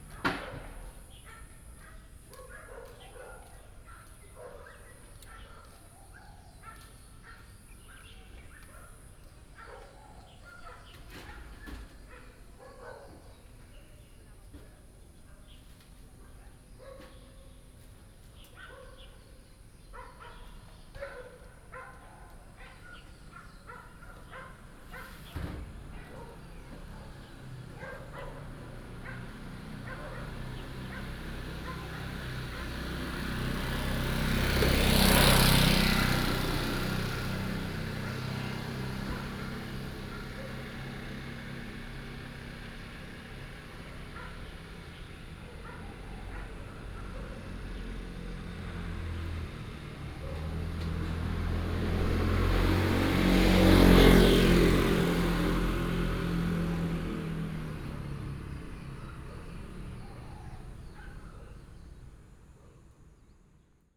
坪頂國小, Tamsui Dist., New Taipei City - In the morning
In the morning, Birds singing, traffic sound, Binaural recordings, Sony PCM D50 + Soundman OKM II
New Taipei City, Taiwan, 3 June 2012